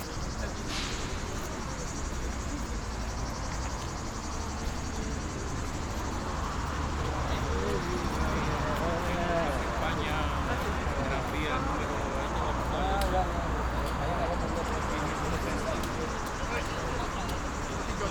Pº del Prado, Madrid, small illegal street market
World Listening Day, WLD